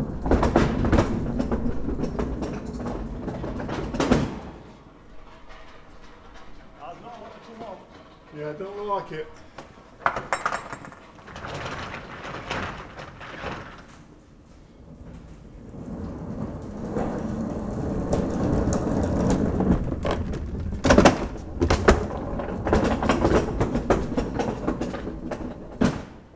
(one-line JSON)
{"title": "Scarborough, UK - taking the delivery in ...", "date": "2013-11-07 06:00:00", "description": "Taking the delivery in ... recorded with open lavalier mics on mini tripod ...", "latitude": "54.28", "longitude": "-0.40", "altitude": "46", "timezone": "Europe/London"}